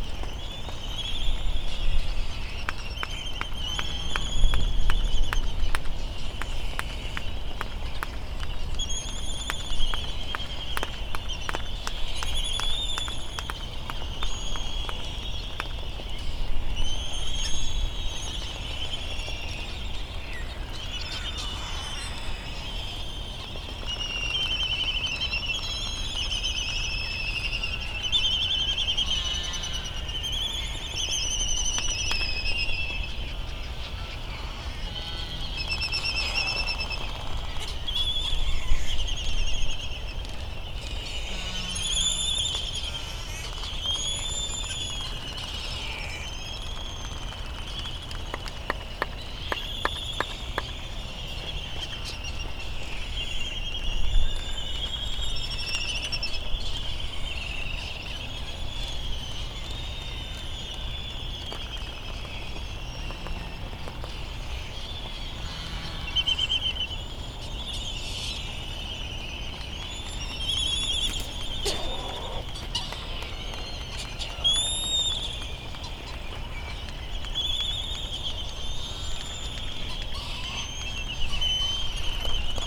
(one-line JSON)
{"title": "United States Minor Outlying Islands - Laysan albatross soundscape ...", "date": "2012-03-13 04:06:00", "description": "Laysan albatross soundscape ... Sand Island ... Midway Atoll ... laysan albatross calls and bill clapperings ... Bonin petrel calls ... open lavaliers ... background noise ... warm with a slight breeze ...", "latitude": "28.22", "longitude": "-177.38", "altitude": "9", "timezone": "Pacific/Midway"}